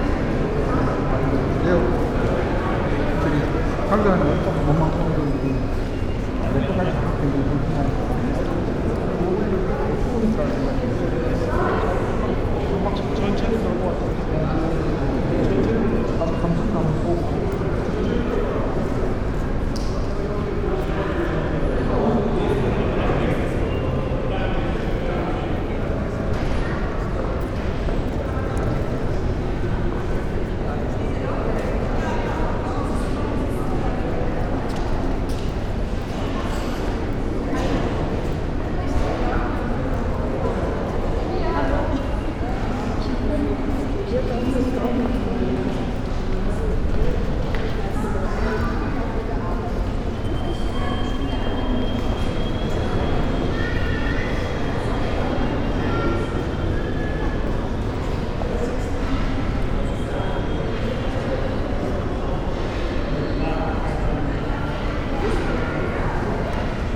Kunsthistorisches Museum, Wien - foyer
strong wind outside, audible inside, murmur of people, walking
Wien, Austria, 10 January 2015, 12:49